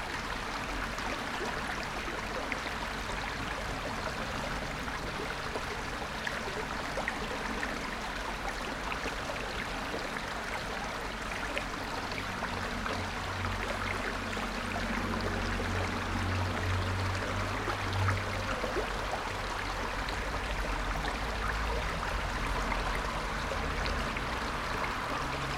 Kupiškis, Lithuania, at the river
Just standing at the river